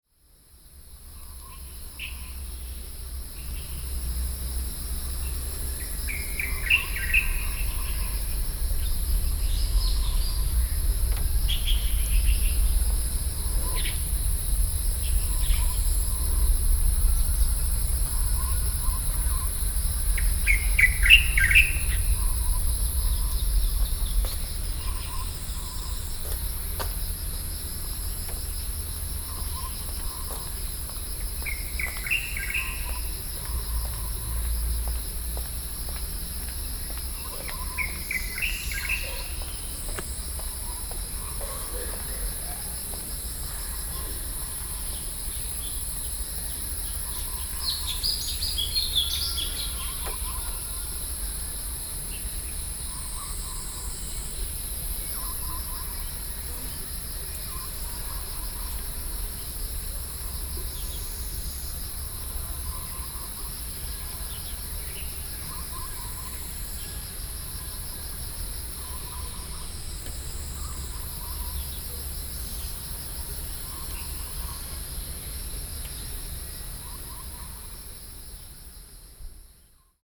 Birdsong, Sony PCM D50 + Soundman OKM II
北投區, 台北市 (Taipei City), 中華民國, June 2012